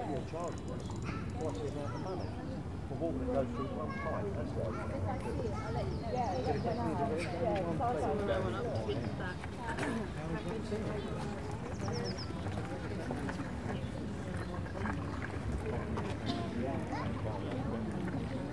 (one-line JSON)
{"title": "Kingston upon Thames, Canbury Gardens - Canbury Gardens", "date": "2018-04-14 11:00:00", "description": "Sony PCM D100. Canbury gardens at noon. Lot of people walking, trains passing, plains and dogs - usual elements of London soundscape. Tiny amount of EQ added to cut the wind noise.", "latitude": "51.42", "longitude": "-0.31", "altitude": "6", "timezone": "Europe/London"}